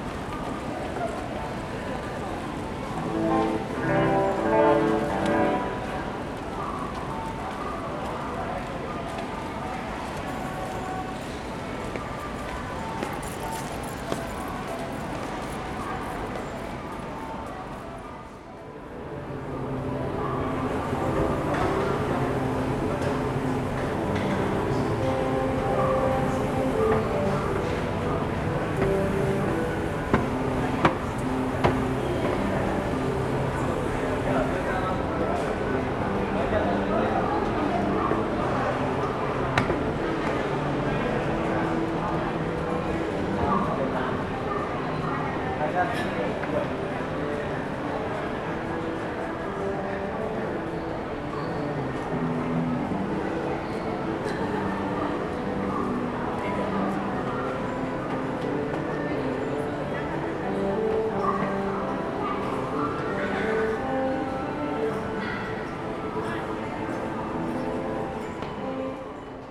In the MRT station hall, Sony ECM-MS907, Sony Hi-MD MZ-RH1